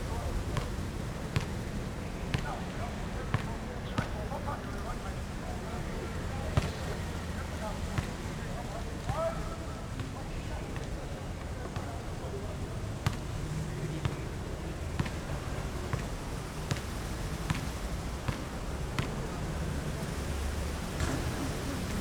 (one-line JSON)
{"title": "Nangang District, Taipei - In the park", "date": "2012-03-06 13:14:00", "description": "Playing basketball sound, Rode NT4+Zoom H4n", "latitude": "25.04", "longitude": "121.59", "altitude": "18", "timezone": "Asia/Taipei"}